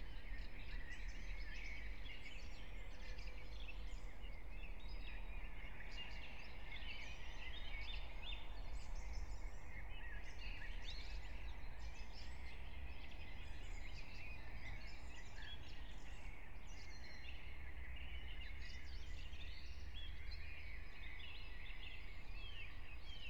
Brno, Lužánky - park ambience
04:15 Brno, Lužánky
(remote microphone: AOM5024/ IQAudio/ RasPi2)
Jihomoravský kraj, Jihovýchod, Česko